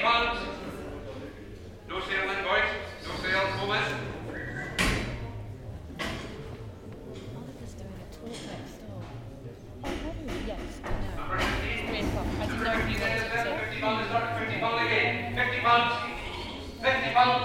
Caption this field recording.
This is the sound of rams being auctioned off after the Shetland Flock Book. The Flock Book is when crofters in Shetland bring their rams to the auction house to be judged and shown and entered into the flock book for the breed. This keeps a record of the genetic lines, and promotes the Shetland breed of sheep. After seeing all the available animals, crofters bid on new rams to put to their ewes over the winter, and sell their own best animals to other folks looking to do the same. It's an amazing opportunity to see some really fine examples of Shetland sheep, and the auction has an extraordinary and beautiful rhythm to it. It's also FAST! It's all about figuring out which rams will improve your flock and so the really good ones that have great genes go for a lot of money.